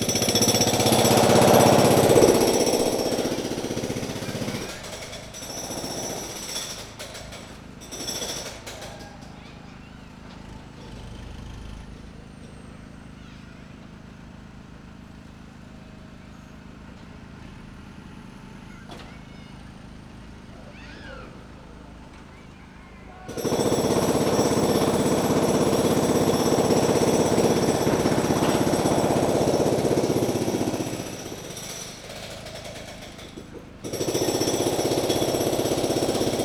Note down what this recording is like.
pneumatic drill crushing concrete, making space for a new sidewalk. sputter of a gas generator. (sony d50)